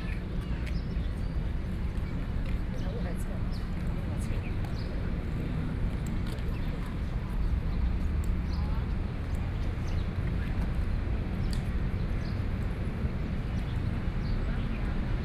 Holiday parks, Traffic Sound, Birds
Sony PCM D50+ Soundman OKM II
Taipei City, Taiwan, 27 April 2014